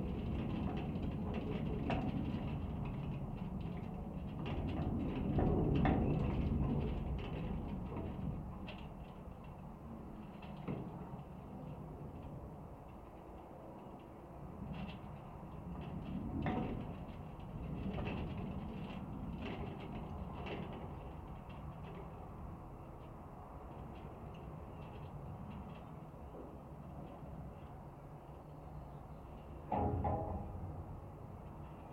some old abandones rusty cradle-like machine in vineyard. contact microphone
Chania, Greece, May 2019